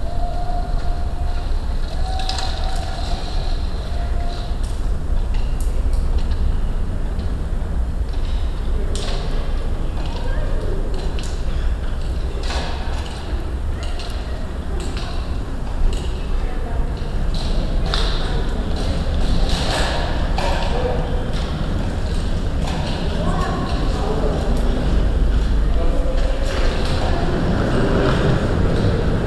Frappant. Parkdeck 1. Stockwerk. 31.10.2009 - Große Bergstraße/Möbelhaus Moorfleet
Parkhaus Frappant 1.Stock 9
Hamburg, Germany